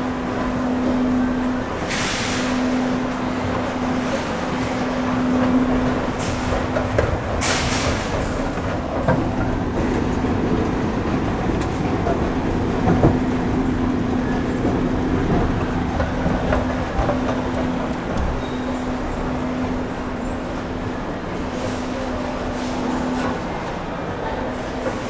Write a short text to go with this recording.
I had to do it in aim to be complete, but this is tasteless. This is a vapid recording of the huge shopping center of LLN. There's 3 levels and I'm using escalator and lift. Beurk !